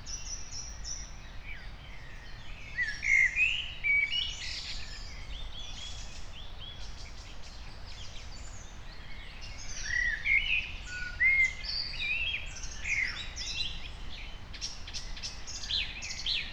04:00 Berlin, Wuhletal - wetland / forest ambience